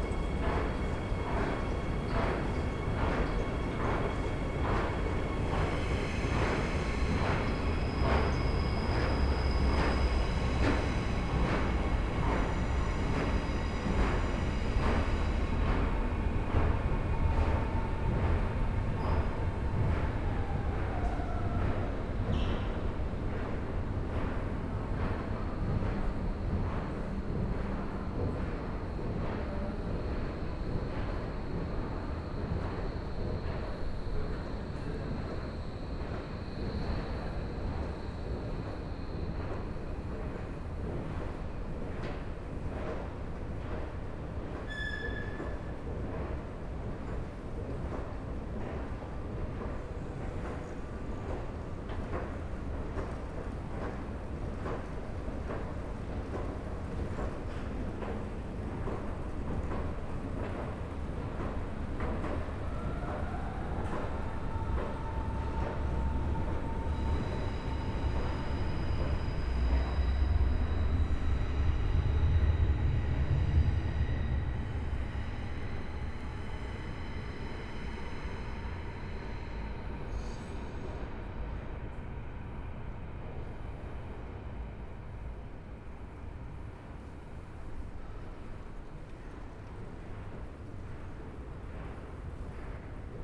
bahnhof / station alexanderplatz - station walking in the pandemic
Berlin Alexanderplatz Station walk in pandemic times. Only few people around at a Wednesday around midnight.
(Sony PCMD50, DPA 4060)